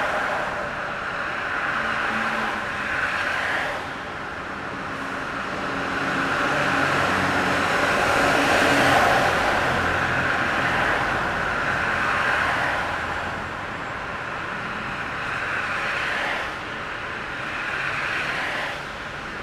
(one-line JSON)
{"title": "Facilities Services Complex, Austin, TX, USA - I-35 & Manor Thunderstorm Traffic", "date": "2015-06-28 12:32:00", "description": "Equipment: Marantz PMD661 and a stereo pair of DPA 4060s", "latitude": "30.28", "longitude": "-97.73", "altitude": "188", "timezone": "America/Chicago"}